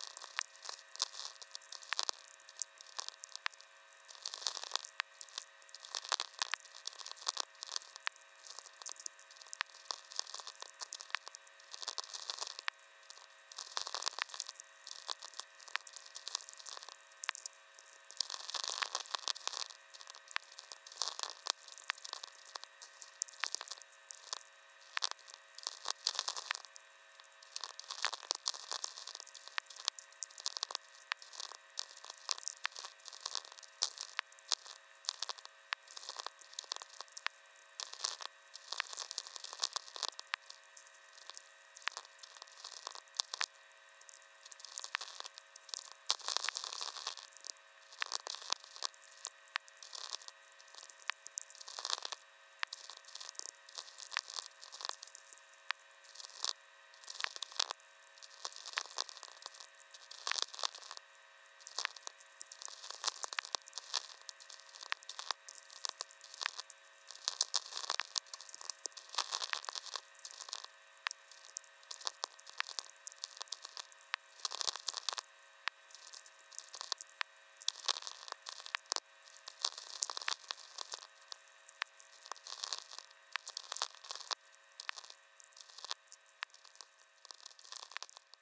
Aukstagiriai, Lithuania, VLF in sand career
standing with VLF receiver in hand on the highest place of sand career..some usual atmospherics and one tweaker